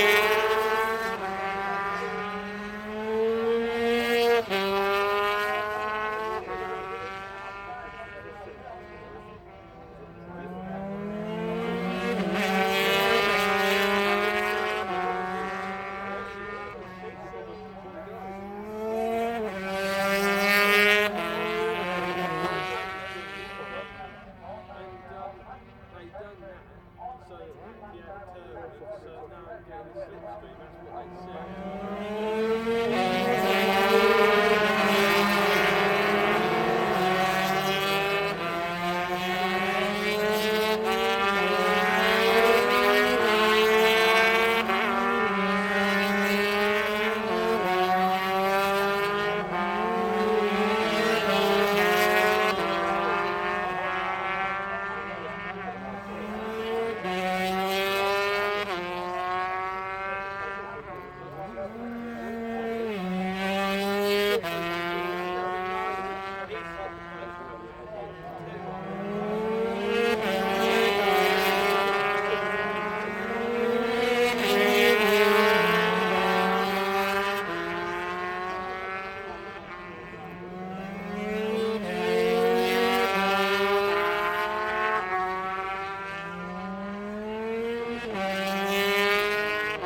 briish superbikes 2006 ... 125 qualifying ... one point stereo mic to mini disk ... date correct ... time not ...